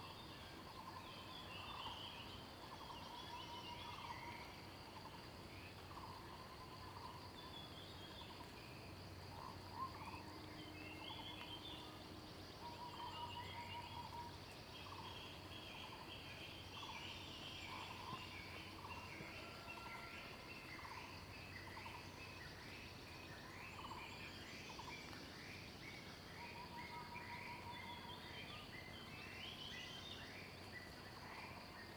水上巷, 埔里鎮桃米里, Nantou County - In the morning
Morning in the mountains, Bird sounds, Traffic Sound
Zoom H2n MS+XY